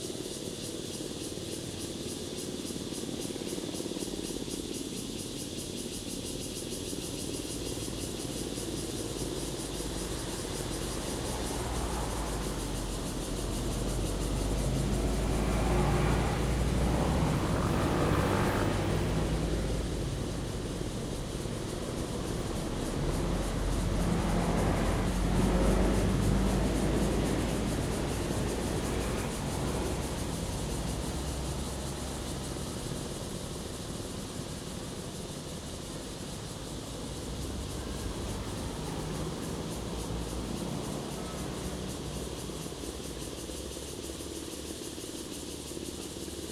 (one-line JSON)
{"title": "秀林鄉銅門村, Hualien County - Rest area", "date": "2014-08-28 10:10:00", "description": "Construction Noise, Cicadas sound, Traffic Sound, The weather is very hot\nZoom H2n MS+ XY", "latitude": "23.96", "longitude": "121.51", "altitude": "142", "timezone": "Asia/Taipei"}